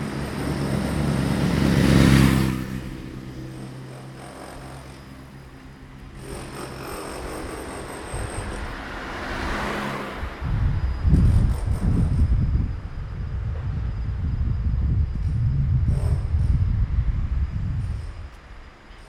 zeitraumexit, Mannheim - Kazimir Malevich, eight red rectangles
street cleaning machine, poor dog, 11 in the morning and they drink to life
Mannheim, Germany, 1 August 2017, 15:06